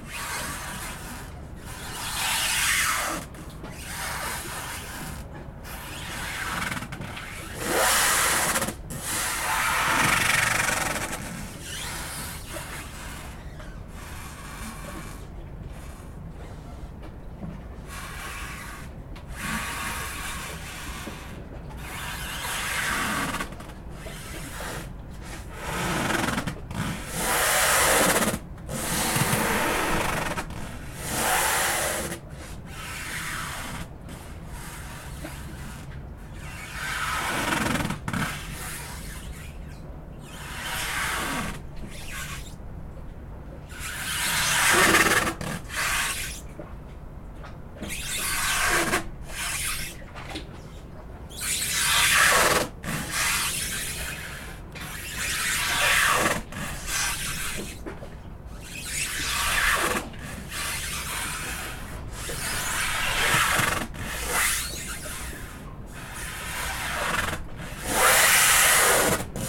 Estonia - Ship scratching against dock
Ship scratching against the pier at Seaplane harbor on a quiet November day.
Tallinn, Estonia, 6 November 2013